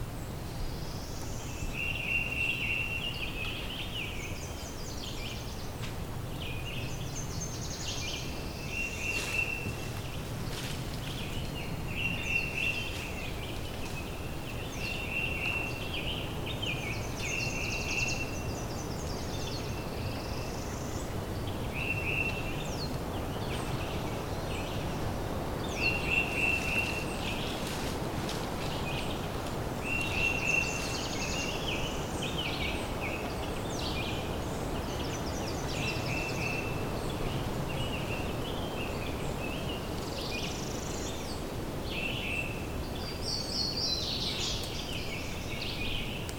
Missouri, USA - Two cows and birds in the woods in Missouri
Encounter with two cows in the wood in Missouri, USA. Birds are singing. Sound recorded by a MS setup Schoeps CCM41+CCM8 Sound Devices 788T recorder with CL8 MS is encoded in STEREO Left-Right recorded in may 2013 in Missouri, USA.